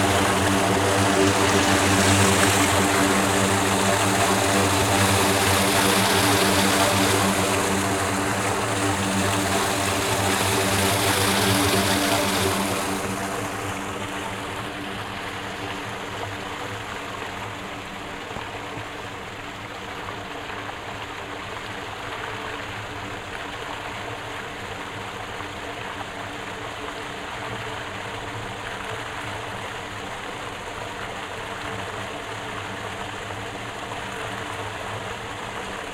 Vyskov, Czechia - whirl
whirl from place where the upper lake streams to the lower lake of the sedimentation pool.